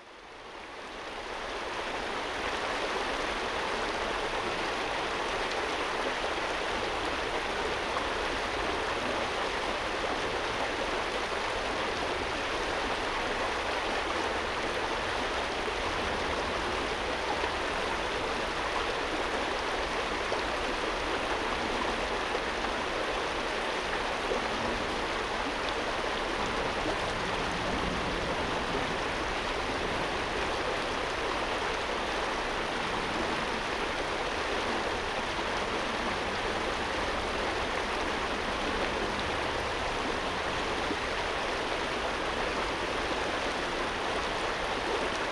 대한민국 서울특별시 서초구 신원동 226-9 - Yeoeui-cheon Stream
Yeoeui-cheon, Stream Flowing
여의천, 물살